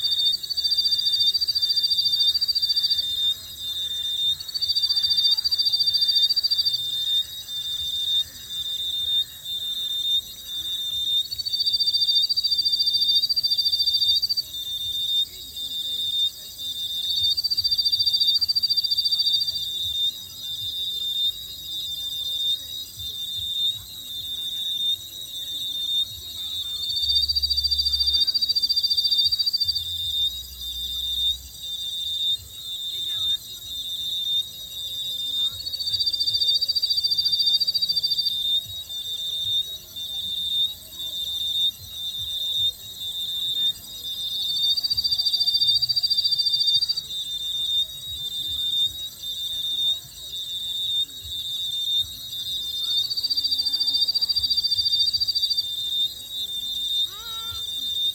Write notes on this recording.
Night-time variations of bioacoustics in suburban Ghana. Recording format: Binaural. Date: 06.03.2022. Time: Between 8 and 9pm. The Soundscape and site to be analysed to identify specific species. The sound will go into the archives to keep memory of the place as the area keeps expanding rapidly with new building constructions and human activity. Field recording gear: Soundman OKM II into ZOOM F4.